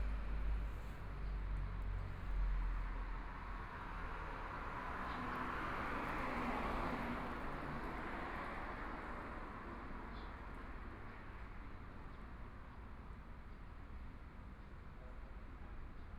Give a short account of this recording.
Traffic Sound, Binaural recordings, Zoom H4n+ Soundman OKM II ( SoundMap20140117- 1)